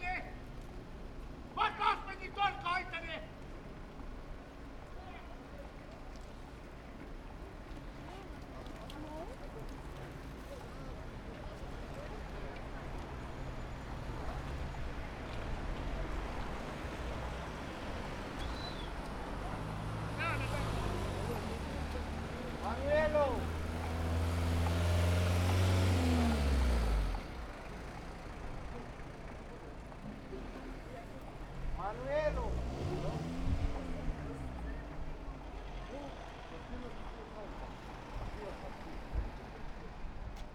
Berlin, Hermannstrasse - following a madman
walking along the street into the subway station and following a mad guy who was stopping every once in a while and shouting at the top of his lungs towards unspecified direction. he got on the train and rode away but i could still see him shouting in the car, waving his hands and scaring the hell out of the other passengers.
Berlin, Germany, 30 May 2015, 14:57